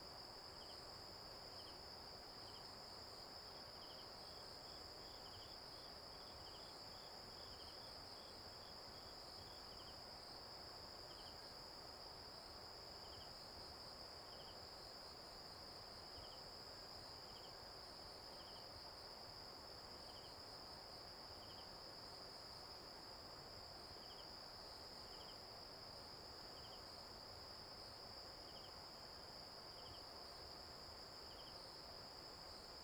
{
  "title": "達保農場三區, 達仁鄉台東縣 - late at night",
  "date": "2018-04-06 03:25:00",
  "description": "Late at night in the mountains, Bird song, Insect noise, Stream sound\nZoom H2n MS+XY",
  "latitude": "22.45",
  "longitude": "120.85",
  "altitude": "249",
  "timezone": "Asia/Taipei"
}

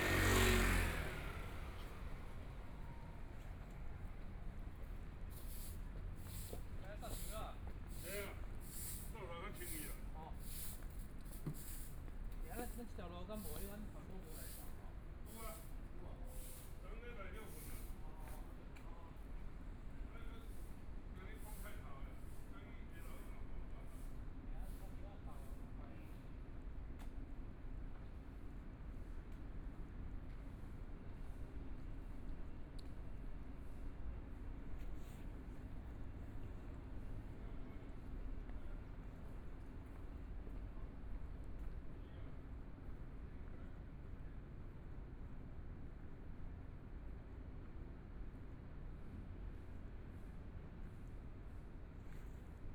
{
  "title": "Fushun St., Taipei City - Walking in the small streets",
  "date": "2014-01-20 17:38:00",
  "description": "MRT train sounds, Traffic Sound, Walking in the small streets, Binaural recordings, Zoom H4n+ Soundman OKM II",
  "latitude": "25.07",
  "longitude": "121.52",
  "timezone": "Asia/Taipei"
}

